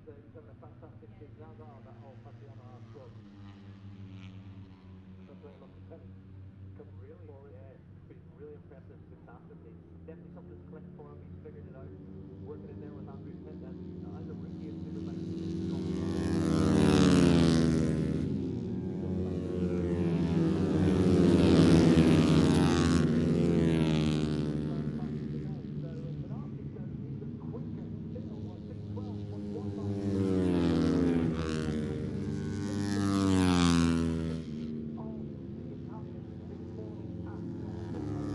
moto three free practice one ... maggotts ... olympus ls 14 integral mics ...